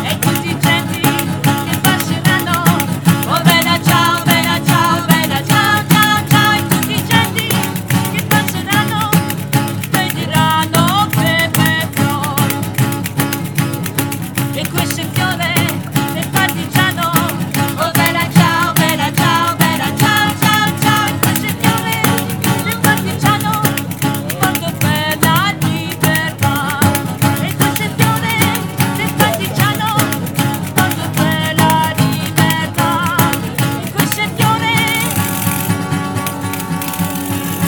Boulevard Jules Michelet, Toulouse, France - Antoinette Cremona play live Bella Ciao
Bella Ciao
Antoinette Cremona live at ST Aubin Market
France métropolitaine, France, 24 April